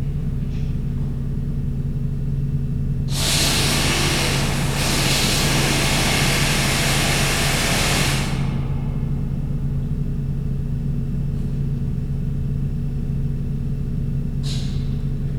The Sounds of an Anglo-Saxon Cemetery, Sutton Hoo, Suffolk, UK - Sutton Hoo
The National Trust is building a tower on this cemetery.
MixPre 3 with 2 x Rode NT5s
East of England, England, United Kingdom, 24 September 2019, 15:40